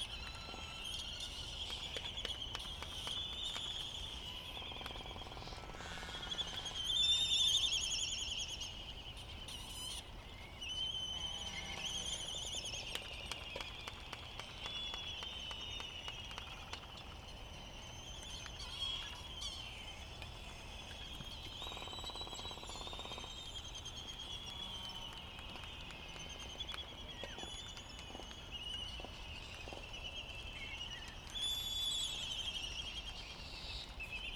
United States Minor Outlying Islands - Laysan albatross dancing ...
Laysan albatross ... Sand Island ... Midway Atoll ... birds giving it the full display ... sky moos ... whistles ... whinnies ... preens ... flicks ... yaps ... snaps ... clappering ... open lavalier mics ... not yet light so calls from bonin petrels ... warm with a slight breeze ...
13 March, ~03:00